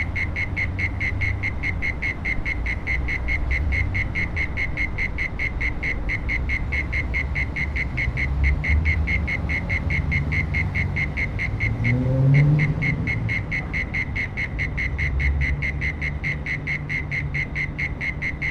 neoscenes: critters under the bridge